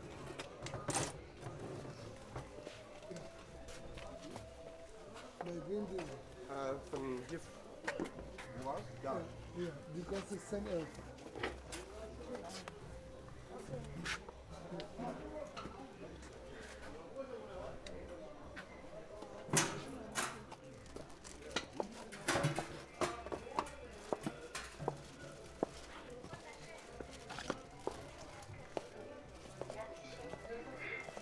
Alt-Treptow district, flea market at Eichenstraße - sailing the river through the flea market jungle
walking around the flea market. rich blend of sounds living in this unusual place. conversations fade in and out. many objects on display are mechanical or electrical emitting strange noises. some needed to be manipulated to squeeze out a sound. turkish pop music, radio and tv broadcasts blasting from old, cheap tv and radio transmitters. shouts of the sellers. i felt like riding a boat on the amazon and listening to the sounds of the flee market jungle. endless journey.
Berlin, Germany, 9 December 2012